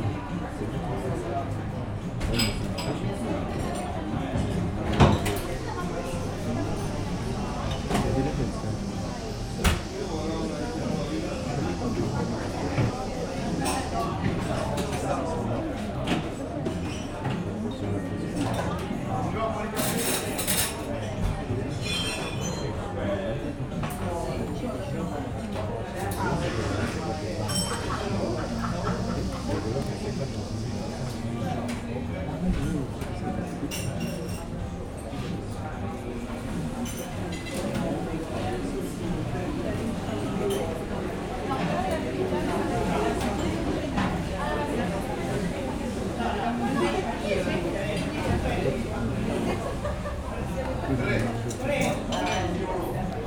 Traveling through Paris, we made a stop into a quiet bar. Calm sounds of tourists ans the barman making some coffee.